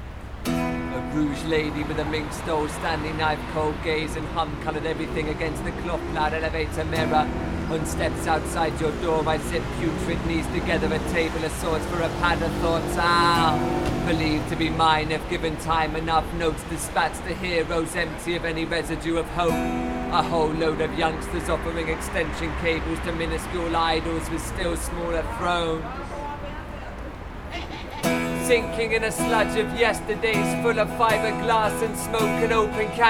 Kiosque à musique - Canebière
A Band of Buriers / Happening N°1 / Part 9
Marseilles, France